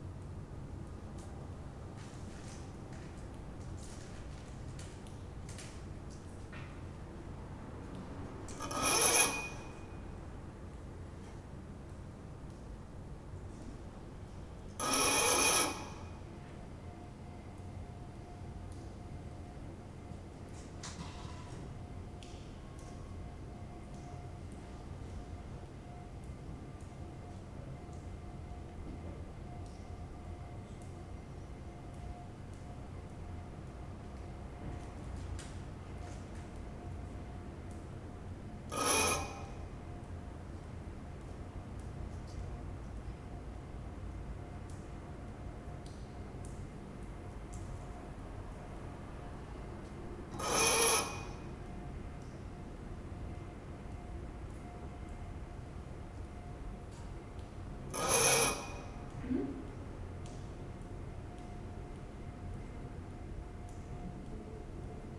drying clothes by night
genovas interior cour by night